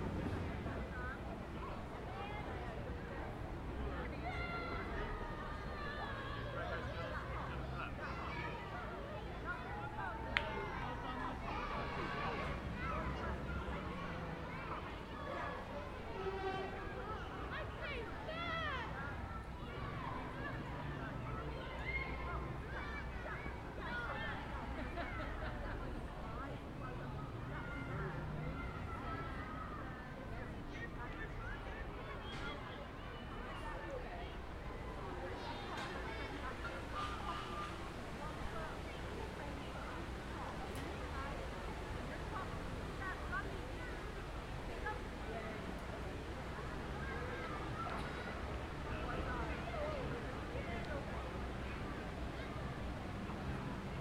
Oxford, Oxfordshire, UK - Sports Day Races, 2014 (from a distance)
Sports Day at St Barnabas' School, Oxford. Recorded from underneath trees in one of the playgrounds. Better ambience. Recorded via a Zoom H4n with a Windcat on. Sunny weather, some wind in trees can be heard. Also some of the sounds from nearby streets can be heard. The Zoom was placed on part of a climbing frame.
9 July 2014